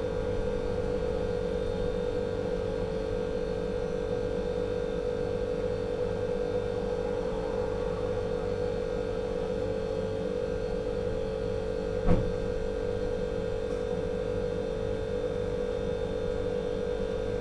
{"title": "Szymanowskiego, Lidl store - locked in a compartment", "date": "2018-09-12 11:16:00", "description": "recorder was locked in a smal plastic trunk of a scooter, which was parked on a store parking lot nearby AC units. they turn off for a little while and you can hear the ambience of the parking lot. later in the recording the AC units kick back on. (roland r-07 internal mics)", "latitude": "52.46", "longitude": "16.91", "altitude": "100", "timezone": "Europe/Warsaw"}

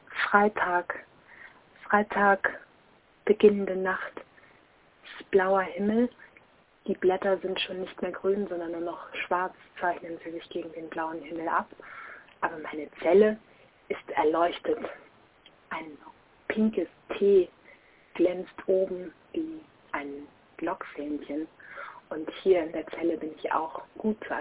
{"title": "Telefonzelle, Dieffenbachstraße - Erleuchtetes Lockfähnchen 13.07.2007 22:04:58", "latitude": "52.49", "longitude": "13.42", "altitude": "42", "timezone": "GMT+1"}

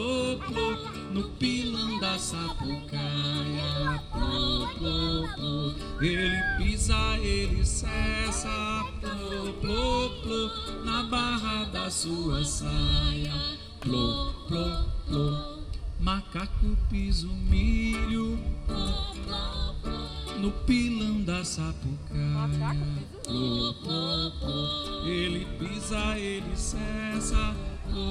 {"title": "São Félix, BA, Brasil - Domingo no Porto", "date": "2014-03-23 18:15:00", "description": "Contos, Cantos e Acalantos: Apresentação da Canastra Real no Projeto Ourua (Casa de Barro) no Porto de São Félix. Gravado com Tascam DR 40.\nPaulo Vitor", "latitude": "-12.61", "longitude": "-38.97", "altitude": "6", "timezone": "America/Bahia"}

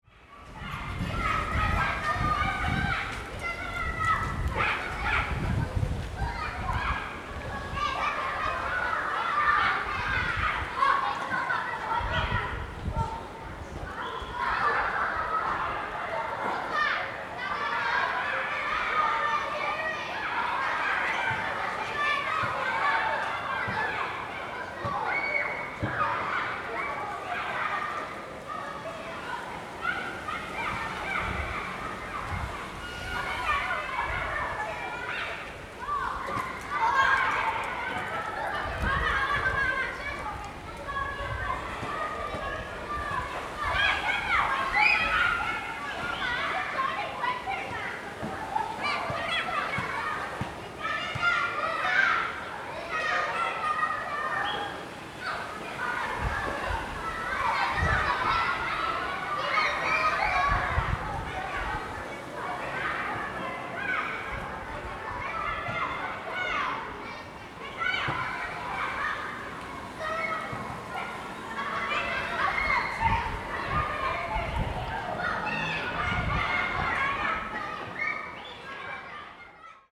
{"title": "育才國小, Yonghe Dist., New Taipei City - Elementary School", "date": "2012-03-28 14:27:00", "description": "Elementary School, Sony Hi-MD MZ-RH1 +AKG C1000", "latitude": "25.01", "longitude": "121.52", "altitude": "24", "timezone": "Asia/Taipei"}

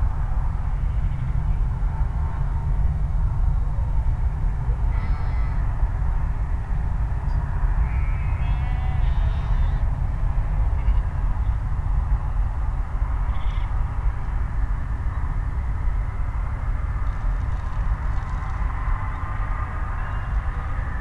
{"title": "workum: berth of marina restaurant - the city, the country & me: sheep vs. road drone", "date": "2015-07-31 22:26:00", "description": "berth of marina restaurant, sheep vs. road drone\nthe city, the country & me: july 31, 2015", "latitude": "52.97", "longitude": "5.42", "timezone": "Europe/Amsterdam"}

